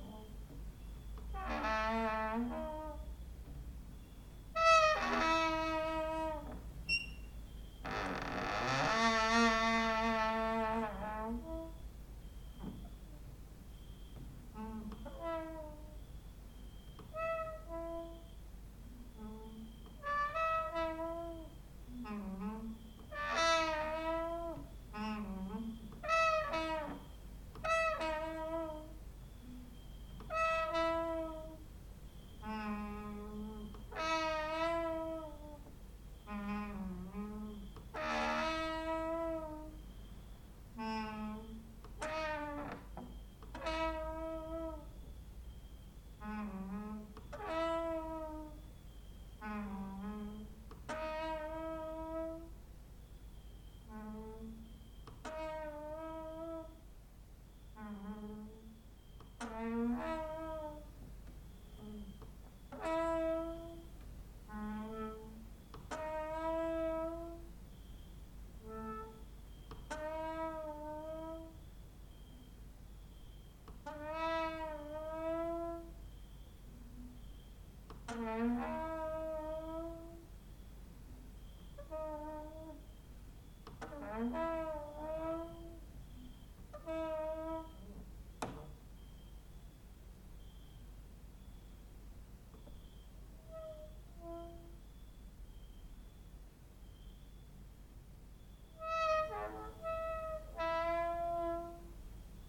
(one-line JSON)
{"title": "Mladinska, Maribor, Slovenia - late night creaky lullaby for cricket/13/part 1", "date": "2012-08-22 00:04:00", "description": "cricket outside, exercising creaking with wooden doors inside", "latitude": "46.56", "longitude": "15.65", "altitude": "285", "timezone": "Europe/Ljubljana"}